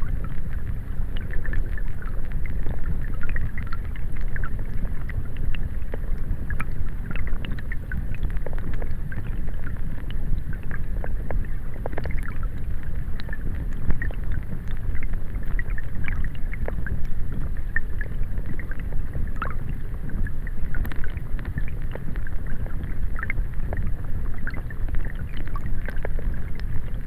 Joneliškės, Lithuania, river Viesa underwater
hydrophone in a stream of river Viesa
Utenos apskritis, Lietuva, May 2020